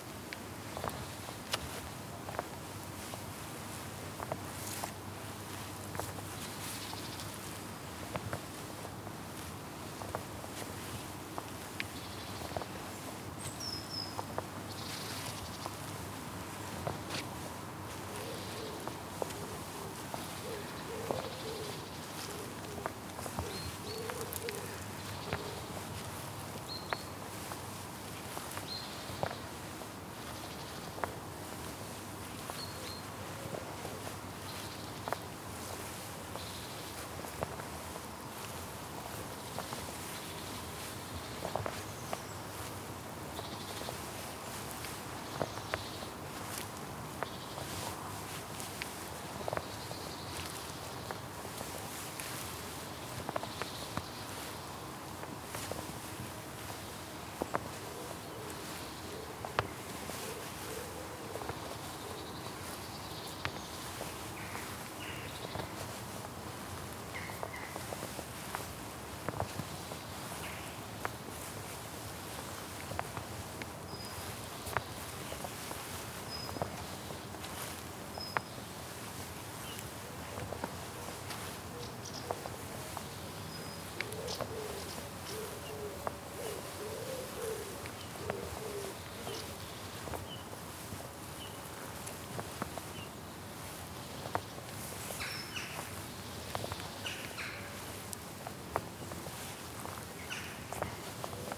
Hill Side, Lancaster, UK - Lancaster Priory Walking Meditation
Walking meditation around the churchyard of Lancaster Priory Church. Recorded on a Tascam DR-40 using the on-board microphones (coincident pair) and windshield.
13 August 2017, ~08:00